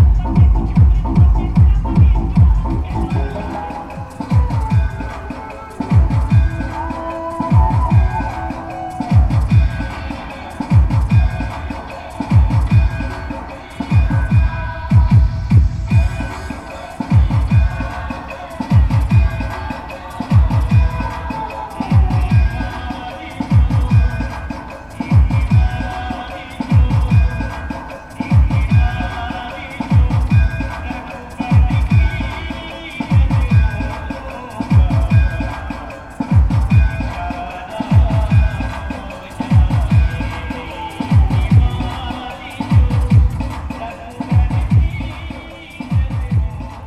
Orachha, Madhya Pradesh, Inde - Durga party with DJ Firo
An amazing mobile soundsystem circulates on the village's main road to celebrate Durga. It is followed by young men and ... horses dancing.
Uttar Pradesh, India, 22 October 2015